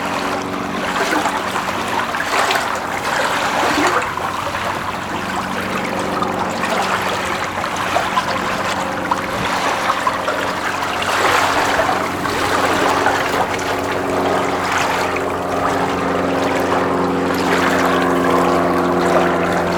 Ptasi Raj, Gdańsk, Poland - Grobla tama
Grobla mała tama